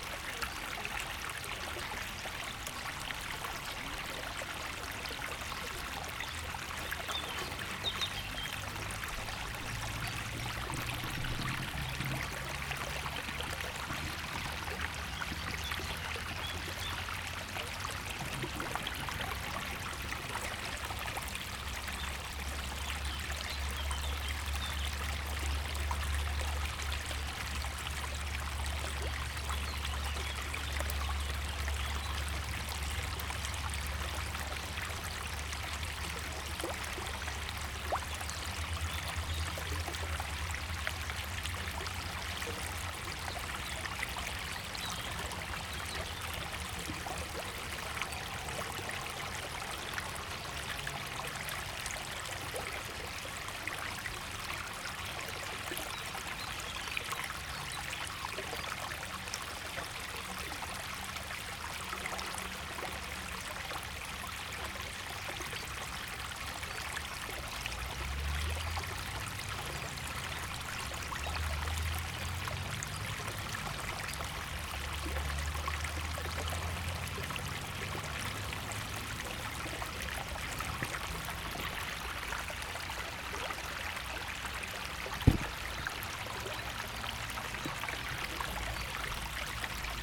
Ukraine / Vinnytsia / project Alley 12,7 / sound #8 / the sound of the river
Вінницька область, Україна, 27 June 2020